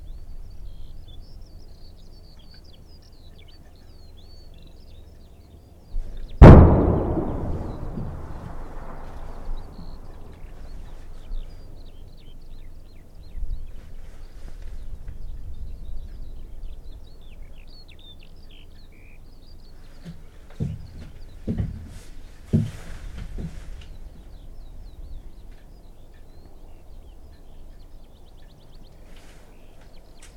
Otterburn Artillery Range - armored field post
Birds and single blast at checkpoint near gated road at Cocklaw Green.
15 June 2010, ~14:00